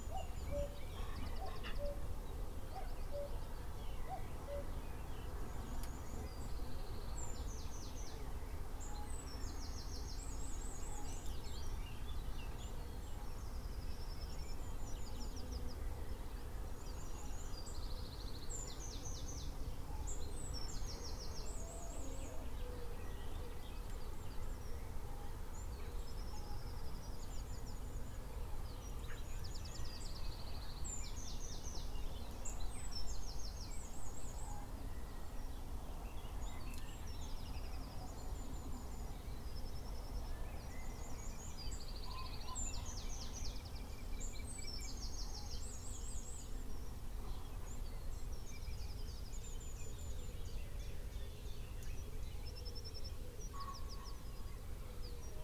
Punnetts Town, Heathfield, UK - Cuckoo Calling 1 May 2019
Cuckoo arrived on 19 April - has been calling most mornings. Woke up at 6am to hear this beautiful sound. Tascam DR-05 internal mics with wind muff. Amplified slightly in Audacity